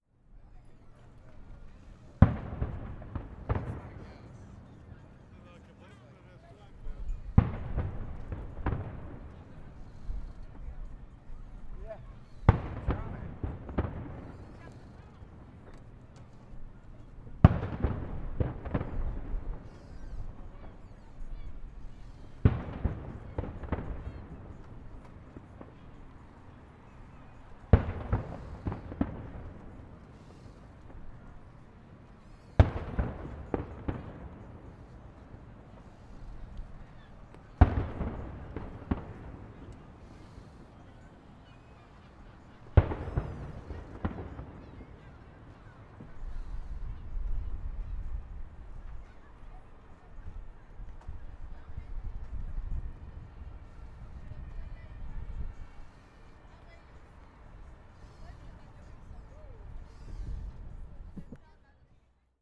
Norrmalm, Stockholm, Suecia - Gunshots
Canonades de fons.
Gunshots background.
Cañonazos de fondo.